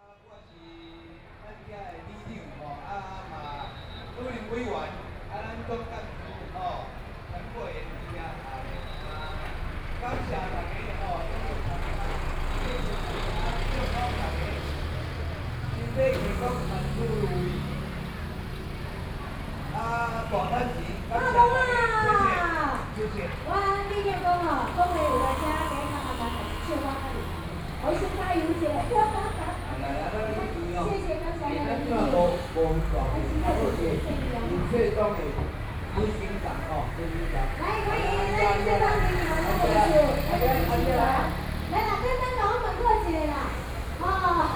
Yuren Rd., Beitou Dist. - Community party
Community party, Intersection, Traffic Noise, Sony PCM D50 + Soundman OKM II
Taipei City, Taiwan, 30 September 2013, 19:23